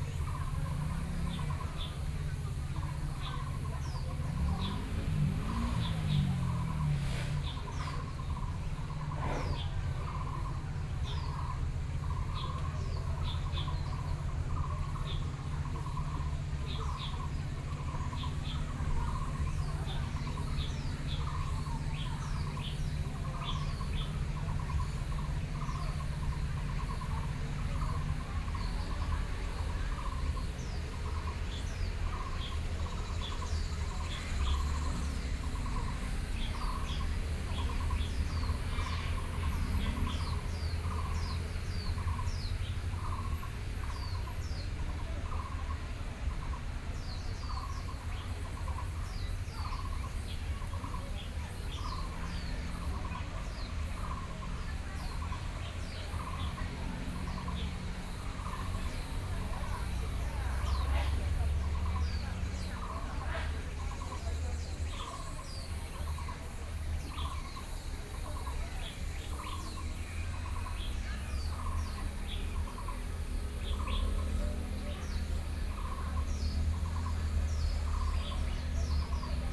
Hutoushan Park - Birdsong
Birdsong, Sony PCM D50 + Soundman OKM II
Taoyuan City, 公園, 11 September 2013, ~10:00